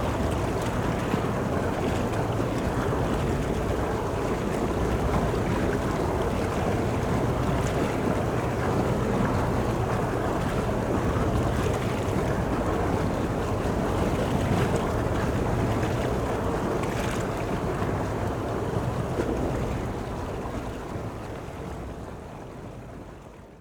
river Wuhle, Hellersdorf, Berlin - sewage water inflow
metal tube, sewage water inflow
(SD702, AT BP4025)